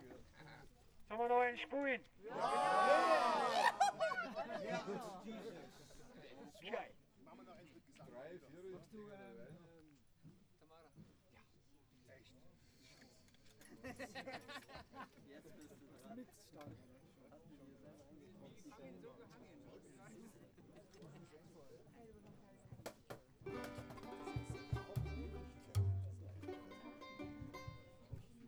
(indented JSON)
{
  "title": "Einberg, Wurmannsquick, Deutschland - Tuna Trio and the ghost on the Swimmingpool",
  "date": "2011-07-29 21:43:00",
  "description": "A fond tribute to 4 musicians (Tuna Trio and the Ghost) who were having a last rehearsal before a concert on the platform of a swimmingpool. Together with other chance visitors we were attracted by the sound of music in the pitch dark. The singer (Neil from London) with a banjo was amplified trough a megaphone, a contrabass from sibiria, a cajón player and a saxophone player from saxony. Recorded with a handheld Zoom H2.",
  "latitude": "48.35",
  "longitude": "12.78",
  "altitude": "447",
  "timezone": "Europe/Berlin"
}